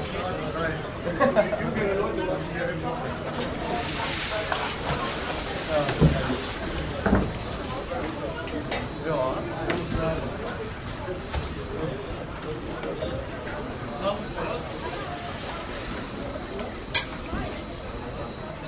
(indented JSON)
{
  "title": "langenfeld, wasserskianlage",
  "date": "2008-04-19 10:45:00",
  "description": "mittags an der wasserskianlage, saisoneröffnung, menschen in warteschlange, mtorenbewegung, abgleiten und einsprünge ins wasser\nproject: : resonanzen - neanderland - social ambiences/ listen to the people - in & outdoor nearfield recordings",
  "latitude": "51.11",
  "longitude": "6.91",
  "altitude": "42",
  "timezone": "Europe/Berlin"
}